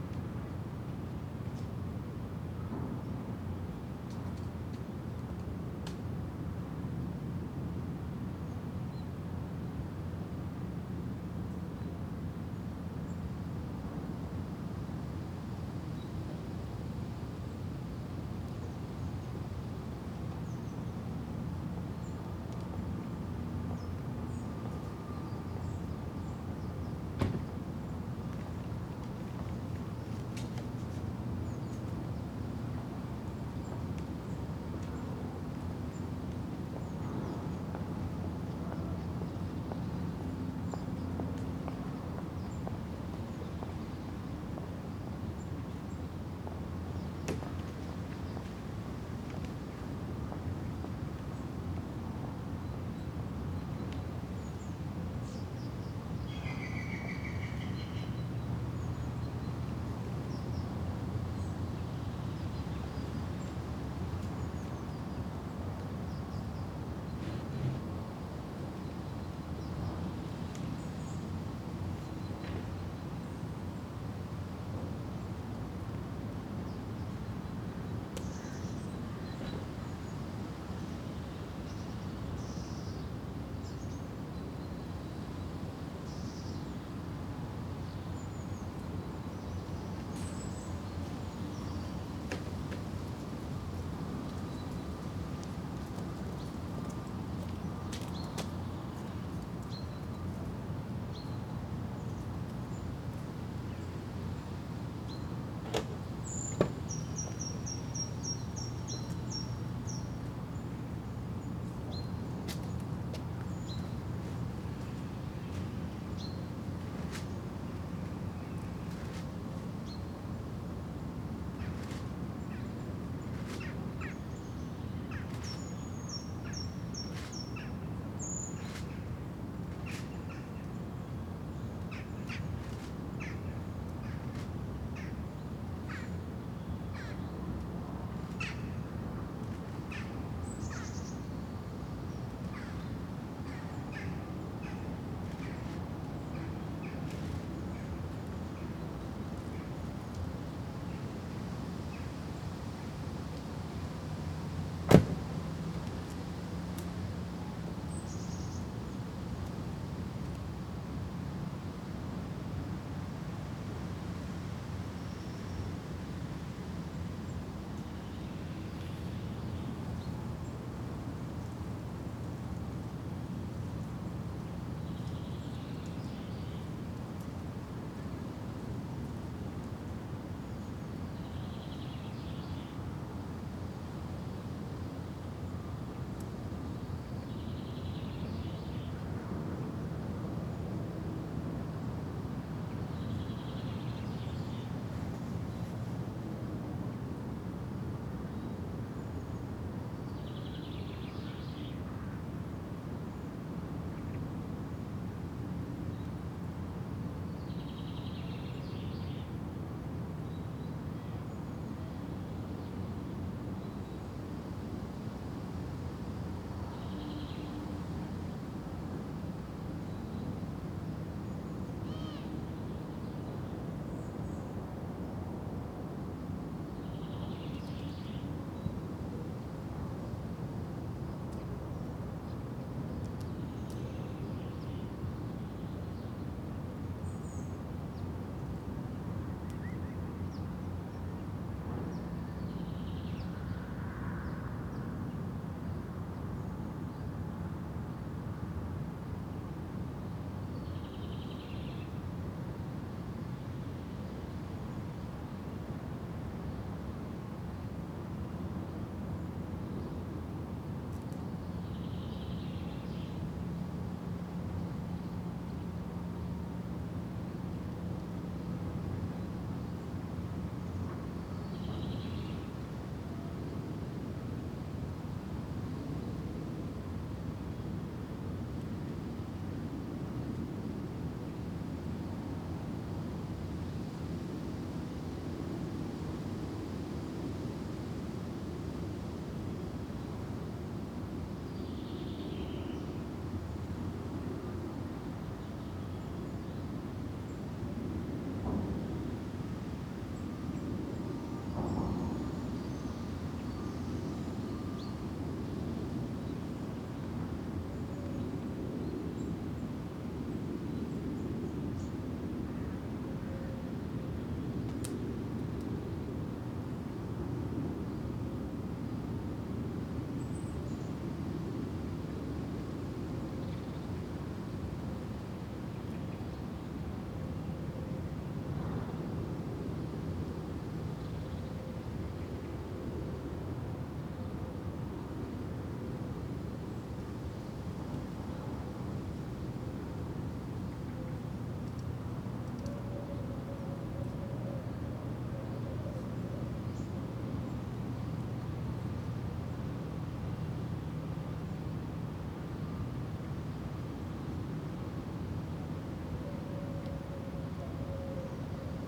Beveren, België - doel
quite day in the little village of DOEL
DOEL has to disappear for the expansion of the port of Antwerp